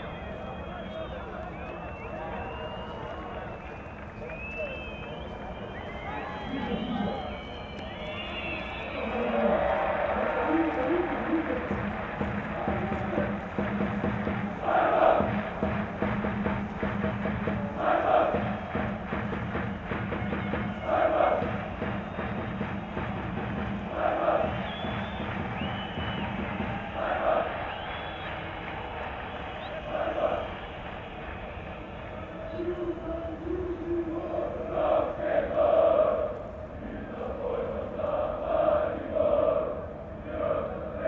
Maribor, near Kalvarija - distant sounds from the soccer arena
the sounds from a match in Maribor stadium, heard on the hills near Kalvarija chapel.
(SD702, Audio Technica BP4025)
1 August 2012, 20:30